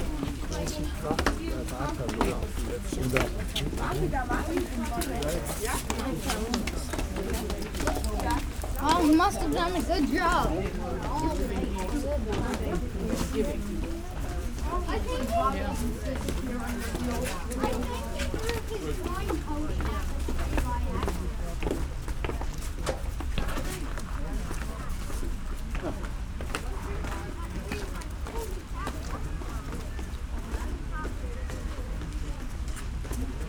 Viktoriapark, Berlin, Deutschland - Kreuzberg monument, steps on stairs
stairway, steps of visitors at the iron Kreuzberg monument, which gave this part of Berlin its name.
(Sony PCM D50, DPA4060)
Berlin, Germany, 24 August 2013, 11:40